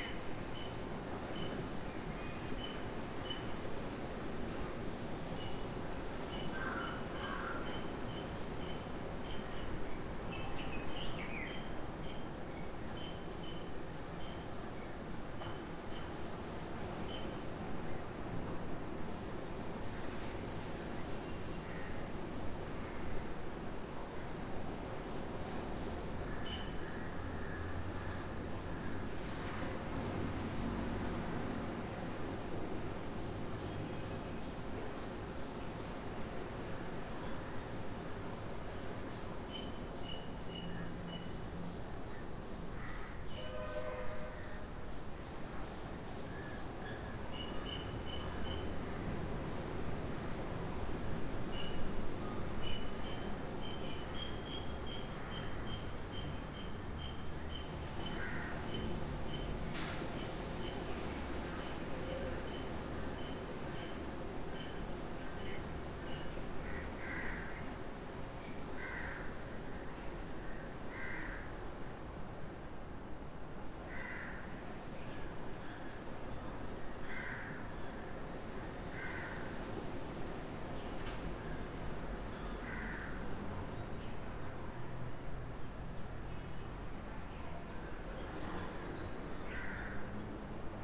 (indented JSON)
{
  "title": "Bambalapitiya, Colombo, Sri Lanka - Balcony morning sounds Sinhala-Tamil NY in Sri Lanka",
  "date": "2012-04-13 09:30:00",
  "description": "Soundscape from my balcony in Colombo on the morning of the Sinhala-Tamil New Year. The usual suspects are there, a srilankan broom brushing the leaves away, the crows, the chipmunks and various other birds that I can't name including a very close visitor towards the end of the track. Its much quieter than usual because its a holiday and its the only day of the year that I haven't seen any buses (the noisiest most dangerous things around) on the road. You can even hear the waves of the sea if you listen carefully.",
  "latitude": "6.89",
  "longitude": "79.86",
  "timezone": "Asia/Colombo"
}